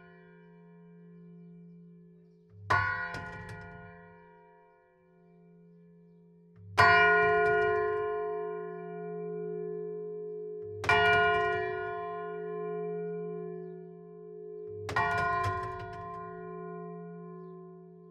Ctr de l'Église, Mametz, France - église St-Vaast de Mametz - Pas-de-Calais - le Glas
église St-Vaast de Mametz - Pas-de-Calais
Une seule cloche - Le Glas
"Cette cloche a été fondue le 14 juillet 1862 et bénite solennellement sous l’administration de Messieurs
Chartier Prosper maire de la commune de Mametz département du Pas de Calais et Scat Jean-Baptiste Adjoint. Monsieur l’abbé Delton, Amable Jean-Baptiste desservant la paroisse de ladite commune.
Elle a reçu les noms de Félicie Marie Florentine de ses parrain et Marraine Monsieur Prisse Albert Florian Joseph attaché au Ministère des Finances et Madame Chartier Prosper née Félicie Rosamonde Lahure."